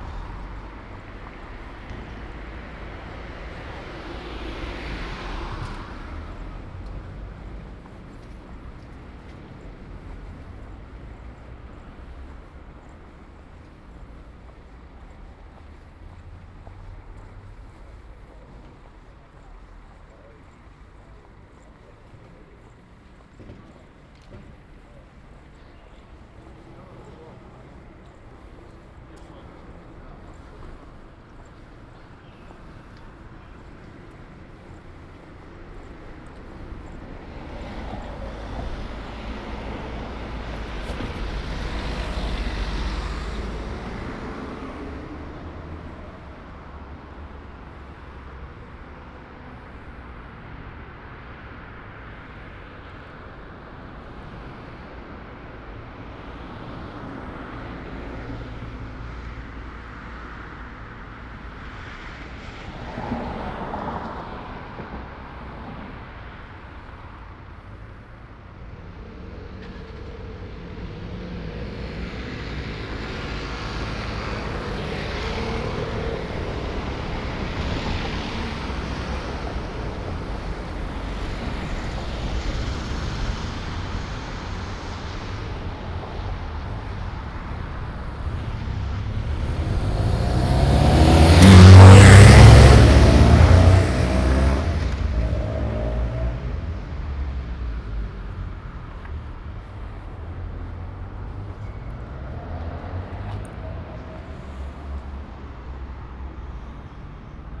Ascolto il tuo cuore, città. I listen to your heart, city. Several chapters **SCROLL DOWN FOR ALL RECORDINGS** - It’s six o’clock with bells on Thursday in the time of COVID19 Soundwalk
"It’s six o’clock with bells on Thursday in the time of COVID19" Soundwalk
Chapter XXXVIII of Ascolto il tuo cuore, città. I listen to your heart, city
Thursday April 9th 2020. San Salvario district Turin, walking to Corso Vittorio Emanuele II and back, thirty days after emergency disposition due to the epidemic of COVID19.
Start at 5:46 p.m. end at 6:18 p.m. duration of recording 31'44''
The entire path is associated with a synchronized GPS track recorded in the (kmz, kml, gpx) files downloadable here: